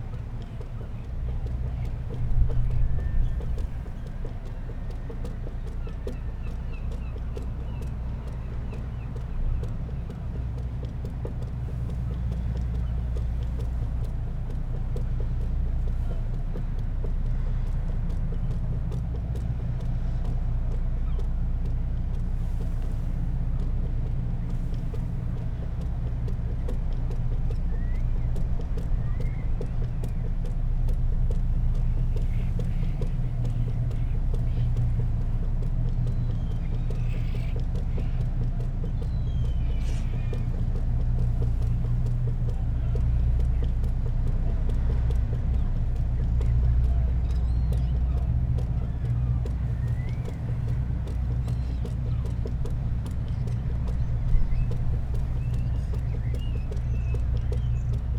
Crewe St, Seahouses, UK - starlings on the harbour light ...
starlings on the harbour light ... dpa 4060s clipped to bag to zoom h5 ... bird calls from ... herring gull ... lesser black-backed gull ... all sorts of background noises ... boats leaving harbour ... flag lanyard bouncing off flagpole ... divers preparing equipment ...
13 November 2021